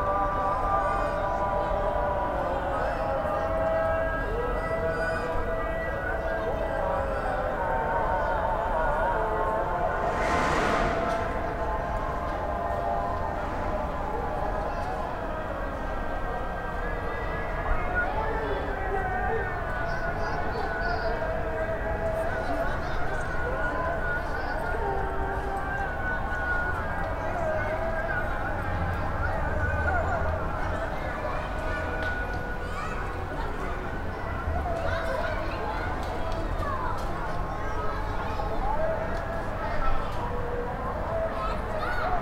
Istanbul, Tarlabasi - Sound of prayer from the roof on the 5th floor
12 August, 22:00